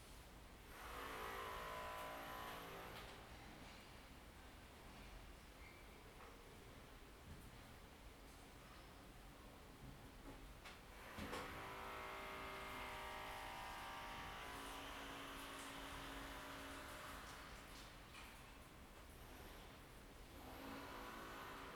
Via Bernardino Galliari, Torino TO, Italia - Inside at Noon with open windows in the time of COVID19 Soundscape
"Inside at Noon with open windows in the time of COVID19" Soundscape
Chapter LXXV of Ascolto il tuo cuore, città. I listen to your heart, city
Wednesday May 1”th 2020. Fixed position in the very centre of my apartment at San Salvario district with all windows open, Turin, sixty four days after (but day ten of Phase II) emergency disposition due to the epidemic of COVID19.
Start at 11:42 a.m. end at 00:10 p.m. duration of recording 27’45”